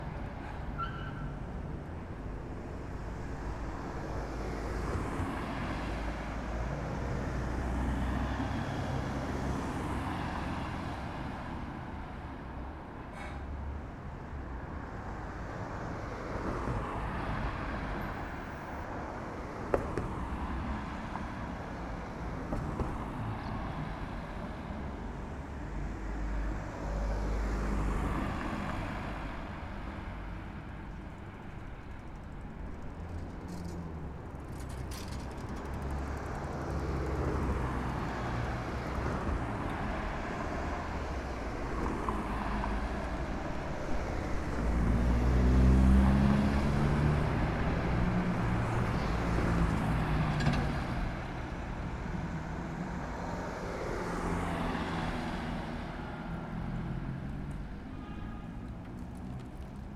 Dvorakova ulica, Maribor, Slovenia - corners for one minute
one minute for this corner - Dvorakova ulica, by the street
8 August 2012, ~15:00